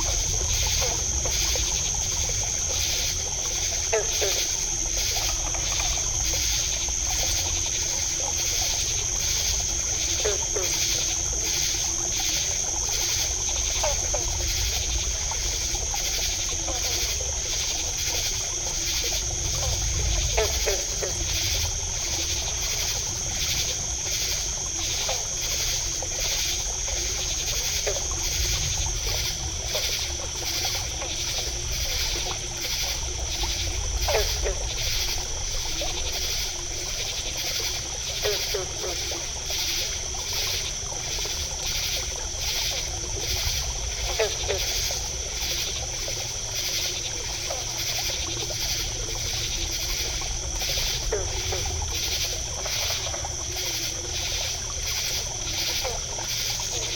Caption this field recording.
a stream exiting a bog softly babbles as insects chatter and frogs gulp and clatter